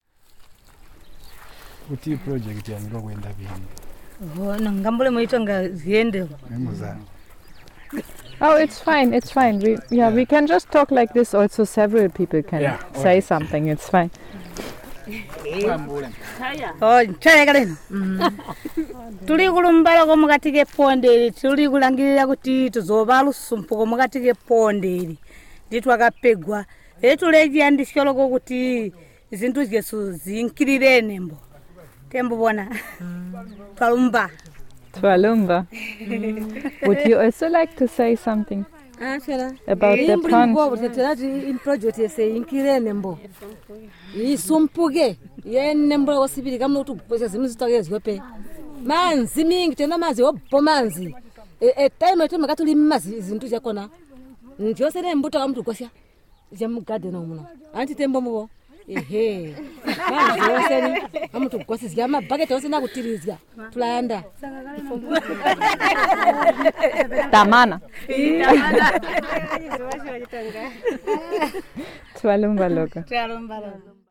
Sebungwe River Mouth, Binga, Zimbabwe - We are the Tuligwasye Women...
the chairlady of the women's group Tuligwasye thanks Zubo and other supporters, one of the women members joins in saying with more seeds and more water... they would even work harder...!